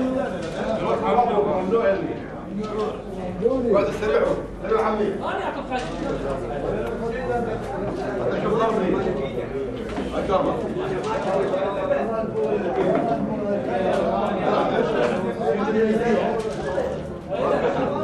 :jaramanah: :abu antars cafe: - eleven